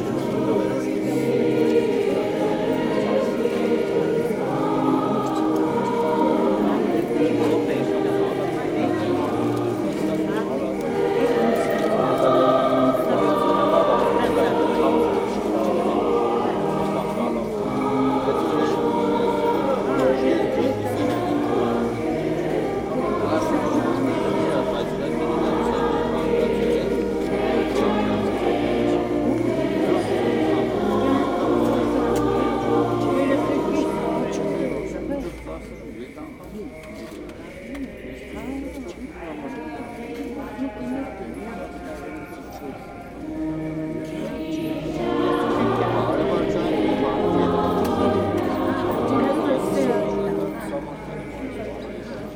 {"title": "Gyumri, Arménie - Divine Liturgy (Liturgy of the Faithful)", "date": "2018-09-09 11:40:00", "description": "During the 3rd part of the orthodox celebration, the Liturgy of the Faithful. The church is absolutely completely full ! People are moving everywhere, entering, going out, lighting candles, discussing, phoning, singing, pushing me, and praying. The orthodox mass in Armenia is a strong experience !", "latitude": "40.79", "longitude": "43.84", "altitude": "1526", "timezone": "Asia/Yerevan"}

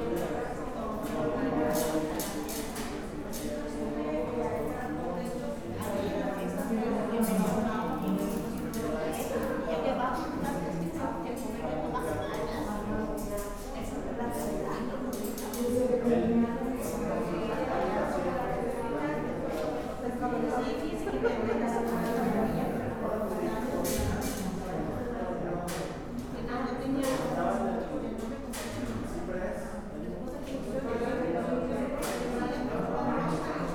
{"title": "Benito Juárez, Centro, León, Gto., Mexico - En las oficinas del registro civil.", "date": "2022-08-29 14:25:00", "description": "In the civil registry offices.\nI made this recording on august 29th, 2022, at 2:25 p.m.\nI used a Tascam DR-05X with its built-in microphones.\nOriginal Recording:\nType: Stereo\nEsta grabación la hice el 29 de agosto 2022 a las 14:25 horas.\nUsé un Tascam DR-05X con sus micrófonos incorporados.", "latitude": "21.12", "longitude": "-101.68", "altitude": "1807", "timezone": "America/Mexico_City"}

